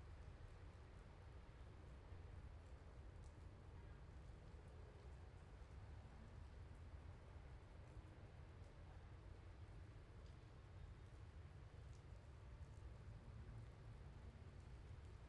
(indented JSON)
{
  "title": "Av 2 Sur, San Baltazar Campeche, Puebla, Pue., Mexique - Puebla - Mexique",
  "date": "2019-09-29 07:00:00",
  "description": "Puebla - Mexique\nAmbiance matinale sur le toit de l'Alliance Française - Un joue comme un autre à Puebla",
  "latitude": "19.02",
  "longitude": "-98.21",
  "altitude": "2124",
  "timezone": "America/Mexico_City"
}